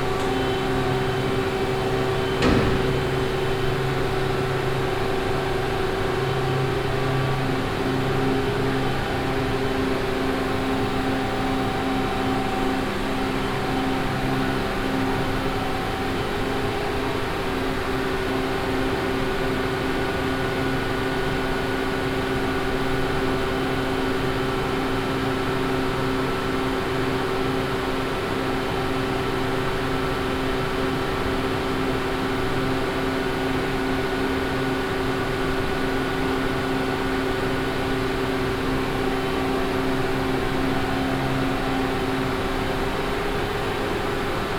Walking down and opening the doors to the generator room of the dam. The sound of the engines and SEO engineer Mr. Schuhmacher explaining that there is no machine active by the time to generate electricity.
Vianden, Damm, Maschinenraum
Hinuntergehend und die Türen des Motorenraums des Dammes öffnend. Das Geräusch von Maschinen und SEO-Mechaniker Herr Schuhmacher erklärt, dass gegenwärtig keine Maschine zur Energieerzeugung aktiv ist.
Vianden, Mur du barrage, salle des transformateurs
Descente et ouverture des portes qui mènent à la salle des générateurs du barrage. Le bruit des moteurs et M. Schuhmacher, l’ingénieur de SEO, expliquant qu’aucune machine n’est actuellement en train de produire de l’électricité.